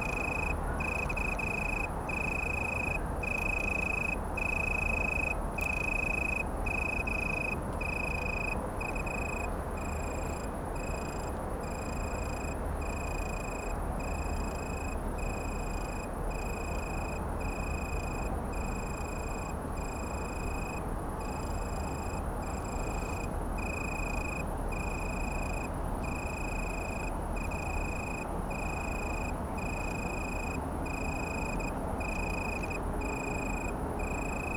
Rheinufer, Köln - Weinhähnchen (Oecanthus pellucens)
Italian tree cricket, Weinhähnchen, (Oecanthus pellucens) closeup in a bush
(Sony PCM D50)